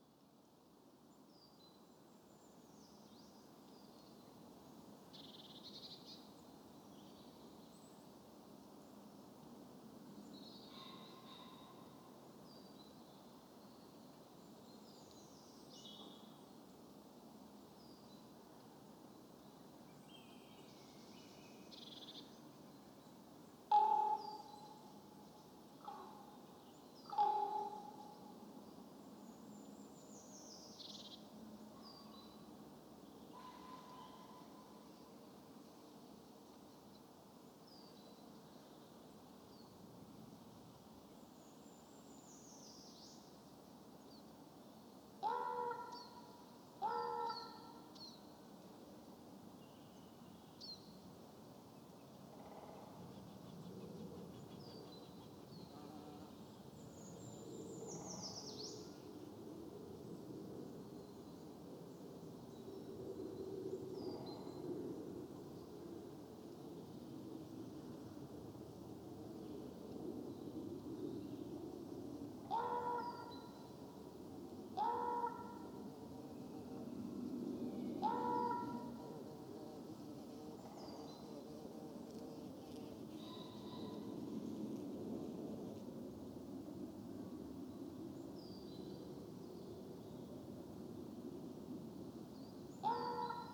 A beautiful spring morning, a gentle breeze blowing in the tops of the trees, with the early Brimstone butterflies making their way through the landscape. The Ravens are nesting nearby and one makes unusual calls from a tall fir. A Bumble bee investigates the microphone setup and passes on by....Sony M10 with small homemade Boundary array.